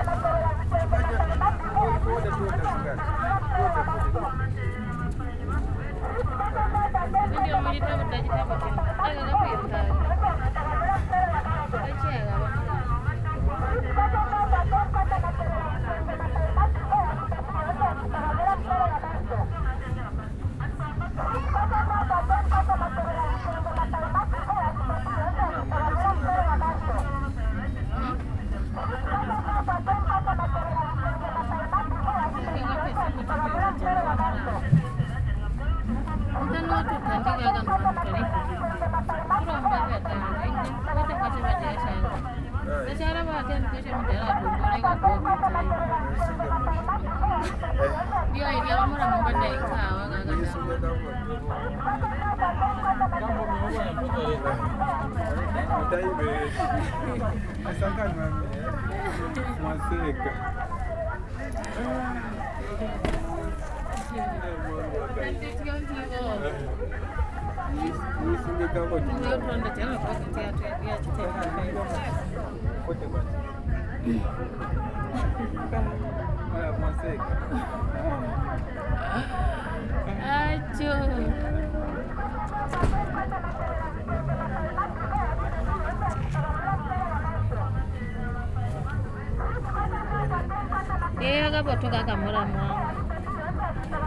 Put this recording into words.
...continuing my stroll among the Chitenge traders... chatting...